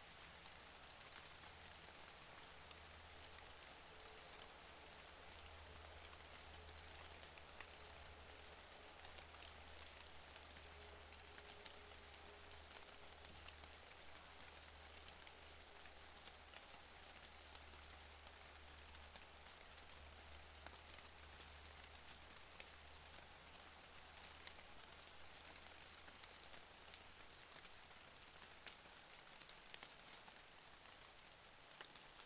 Vyžuonos, Lithuania, raining
it's raining in so-called "Gallows" wood...